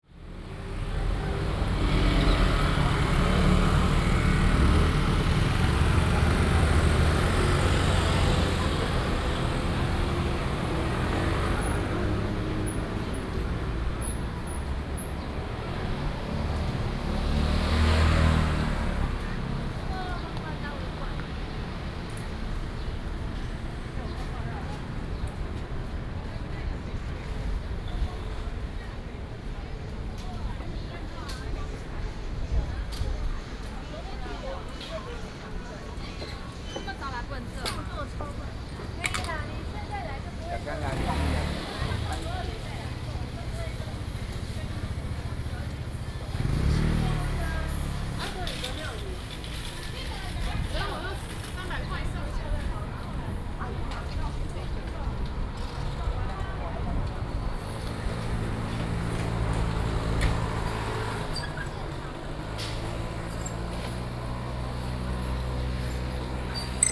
{"title": "Hemu Rd., 宜蘭市大東里 - Night market", "date": "2014-07-07 18:14:00", "description": "Night market, The night market is being prepared, Traffic Sound, Very hot weather", "latitude": "24.76", "longitude": "121.76", "altitude": "16", "timezone": "Asia/Taipei"}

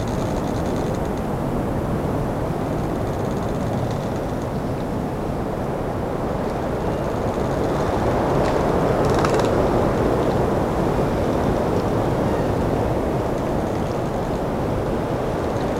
{"title": "leuscherath, small forest, wind in the trees", "date": "2009-12-13 15:04:00", "description": "autum wind in the trees of a small forest. branches cranking. recorded in the early afternoon.\nsoundmap nrw - social ambiences and topographic fieldrecordings", "latitude": "50.92", "longitude": "7.47", "altitude": "246", "timezone": "Europe/Berlin"}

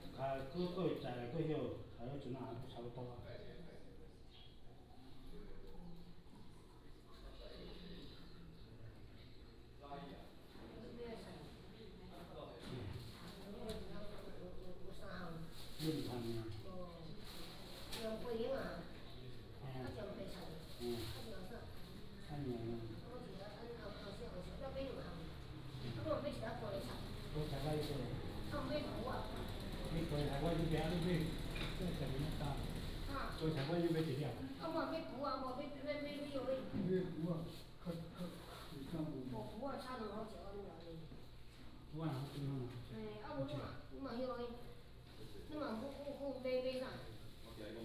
{"title": "岐頭村, Baisha Township - In the visitor center", "date": "2014-10-22 10:35:00", "description": "Small village, Small pier, In the visitor center", "latitude": "23.65", "longitude": "119.61", "altitude": "4", "timezone": "Asia/Taipei"}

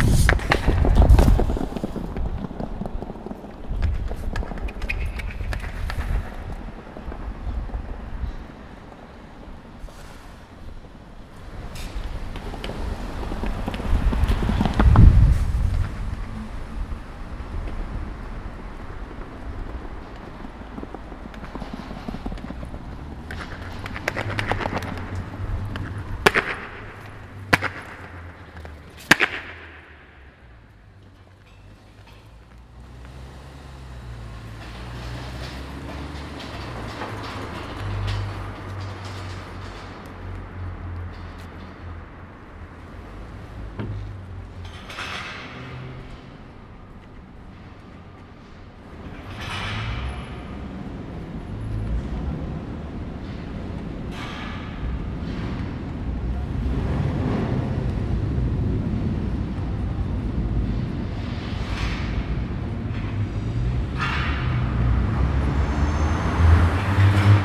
{"title": "Deutz, Köln, Deutschland - In der Unterführung / In the underpass", "date": "2014-10-11 12:47:00", "description": "In der Unterführung rollen und steppen zwei Mädchen auf Ihren Rollschuhen (Heelys). Arbeiter stellen Absperrungen für die abendliche Laufveranstaltung auf.\nIn the underpass roll and stitch two girls on their roller skates (Heelys). Workers provide barriers for the evening running event.", "latitude": "50.94", "longitude": "6.97", "altitude": "43", "timezone": "Europe/Berlin"}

